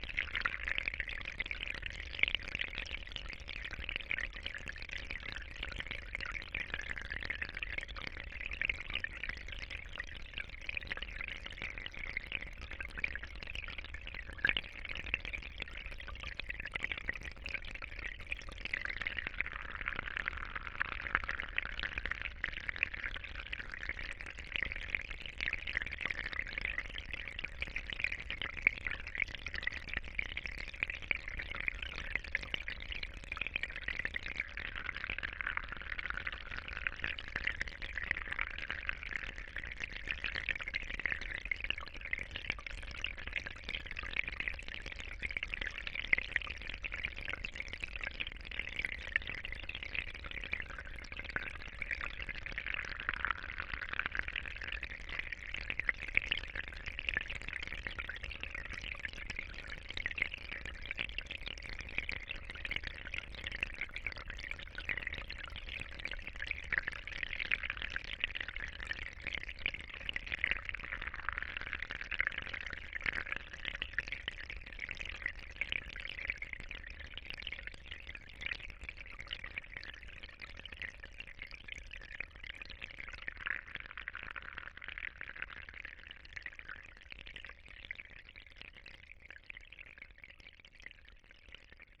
Houtrustweg, Den Haag - hydrophone rec of a little stream next to a drain
Mic/Recorder: Aquarian H2A / Fostex FR-2LE
The Hague, The Netherlands, 1 May 2009, 14:30